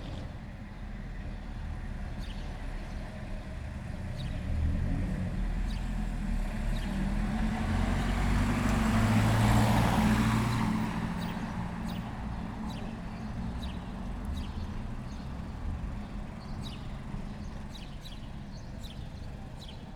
Rokku Buhagiar, Qrendi, Malta - bus stop, noon ambience
Rokku Buhagiar, Qrendi, waiting for the bus, ambience at noon
(SD702, DPA4060)
Il-Qrendi, Malta, 6 April 2017, ~12:00